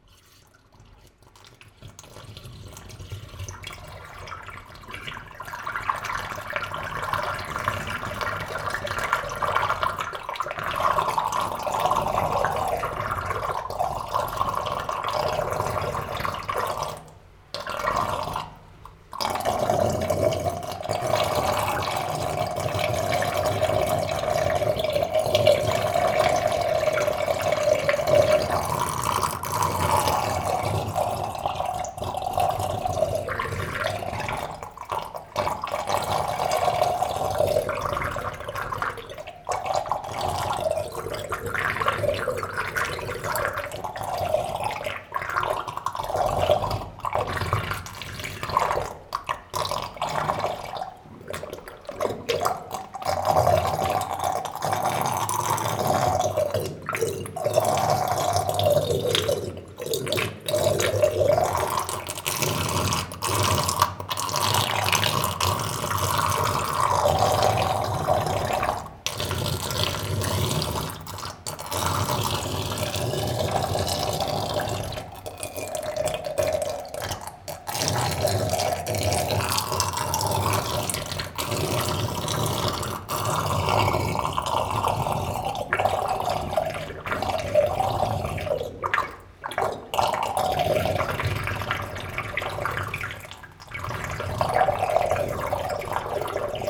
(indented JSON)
{
  "title": "Volmerange-les-Mines, France - Vomiting tube",
  "date": "2018-01-12 09:45:00",
  "description": "Into the underground iron mine. This is a place I know as the farting tube. Water is entering into a small tube, below a concrete wall. A small vortex makes farts. But today, there's very much more water as habitually, essentially because it's raining a lot since 2 months. Lot of water means this tube is vomiting. Indeed, water constantly increases and decreases, making this throw up belching sounds. Is this better than farting ? Not sure !",
  "latitude": "49.44",
  "longitude": "6.07",
  "altitude": "340",
  "timezone": "Europe/Paris"
}